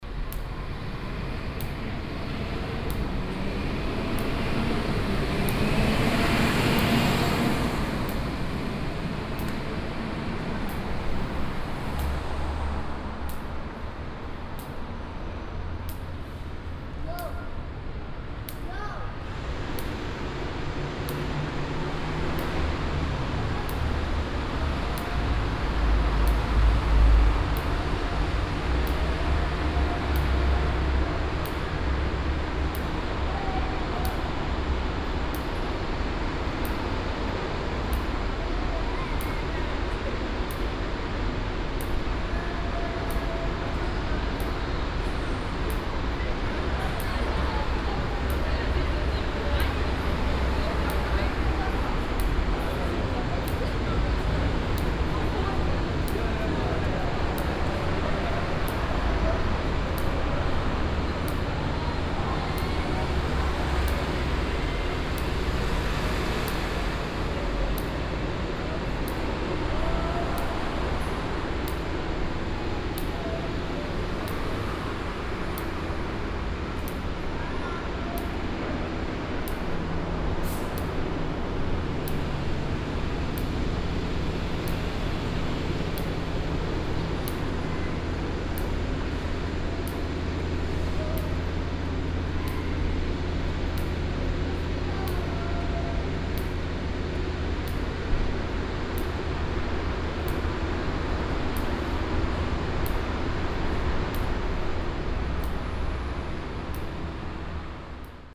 essen, rathaus galerie, click wall
A clicking wall at the ground floor entrance of the gallery, that is underneath the bridge construction.
Projekt - Klangpromenade Essen - topographic field recordings and social ambiences